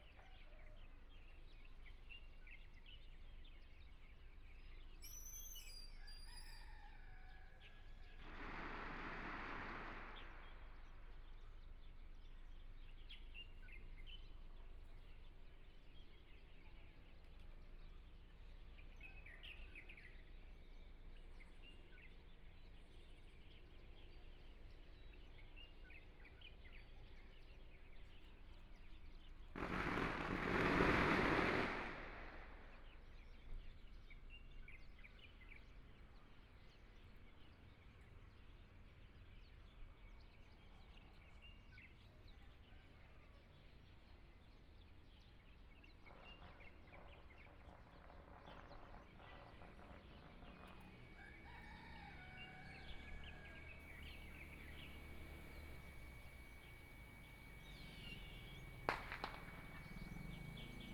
雲林縣水林鄉蕃薯村 - Environmental sounds
small Town, Broadcast Sound, Birds singing, Pumping motor sound, The sound of firecrackers, Binaural recordings, Zoom H4n+ Soundman OKM II